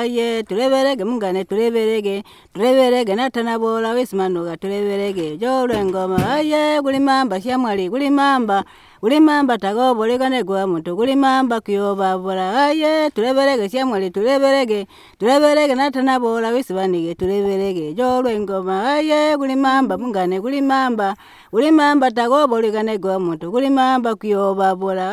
Manjolo, Binga, Zimbabwe - Mesialina's song....
Mesialina Mudimba of Chibondo village sings a traditional song sung by girls for Chilimba entertainment.
recordings made by Margaret Munkuli, Zubo's CBF for Manjolo, during the radio project "Women documenting women stories" with Zubo Trust, a women’s organization in Binga Zimbabwe bringing women together for self-empowerment.
26 October 2016, 13:17